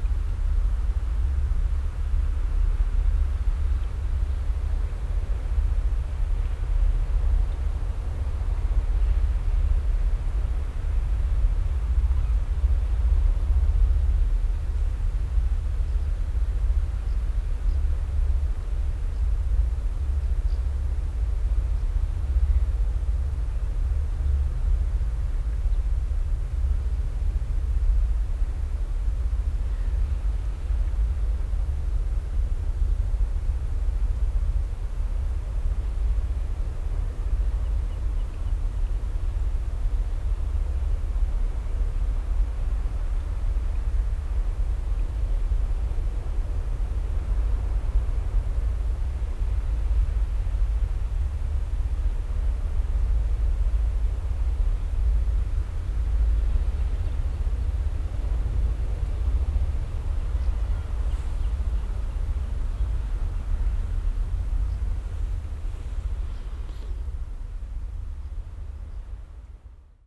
audresseles, meeresufer bei ebbe, vorbeifahrt fähre
morgens am meeresufer bei ebbe, möwen im aufflug, die dröhnende resonanz der vorbeifahrt der stündlich verkehrenden speed fähre
fieldrecordings international:
social ambiences, topographic fieldrecordings